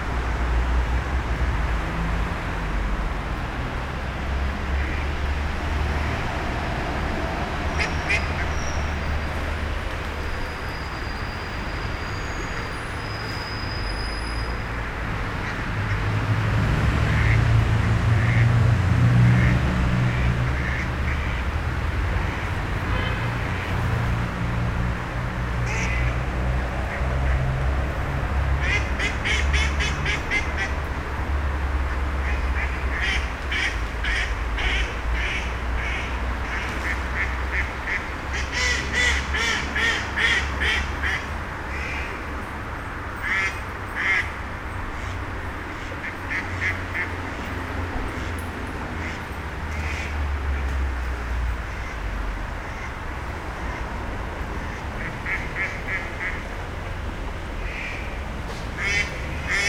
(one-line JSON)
{"title": "Troyes, France - Seine river in Troyes", "date": "2017-08-03 09:30:00", "description": "The Seine river flowing in Troyes city is absolutely not pastoral, there's cars everywhere and dense noise pollution. We are only 10 kilometers from Clerey, where the river was a little paradise. It changes fast.", "latitude": "48.30", "longitude": "4.08", "altitude": "105", "timezone": "Europe/Paris"}